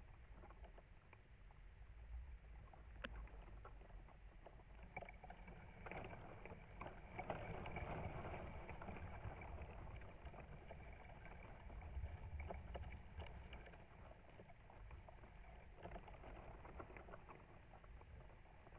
I sat under this tree in a comfortable chair for most of my visit to Mull overlooking the loch. As the weather changed throughout the day and night I made recordings of the environment. The tide came in and out various winds arrived along with all the birds and animals visiting the shore. To the left of me were a line of pine trees that sang even with the slightest of breezes, and to the left was a hillside with a series of small waterfalls running down its slope. I became aware of the sounds the branches of the tree were making in the various winds and used a pair of contact mics to make the recording. Sony M10.